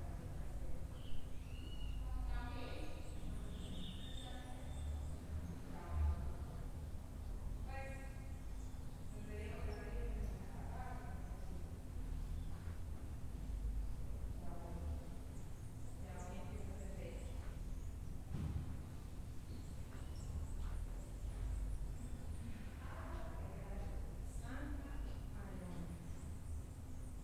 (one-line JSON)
{"title": "Cl., Medellín, Antioquia, Colombia - Ambiente CPTV", "date": "2021-10-04 08:21:00", "description": "Ambiente grabado en el centro de producción de televisión de la Universidad de Medellín, durante el rodaje del cortometraje Aviones de Papel.\nSonido tónico: voces, pájaros cantando.\nSeñal sonora: objetos moviéndose.\nEquipo: Luis Miguel Cartagena Blandón, María Alejandra Flórez Espinosa, Maria Alejandra Giraldo Pareja, Santiago Madera Villegas, Mariantonia Mejía Restrepo.", "latitude": "6.23", "longitude": "-75.61", "altitude": "1579", "timezone": "America/Bogota"}